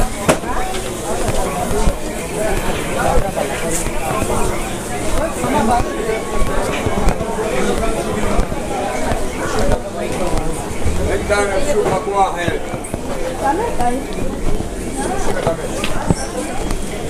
carmel-market, tel-aviv/yafo - carmel-market
a walk starting at Shafar 10, where a Cafè named שפר is, heading to the market, going right hand till the end at Magen David Square. Takes about 9 minutes.